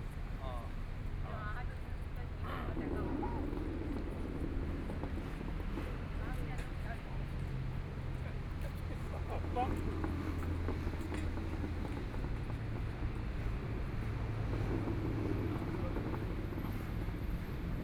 Walk in the park, Traffic Sound
Binaural recordings
Neihu District, Taipei City, Taiwan, 15 March, 17:37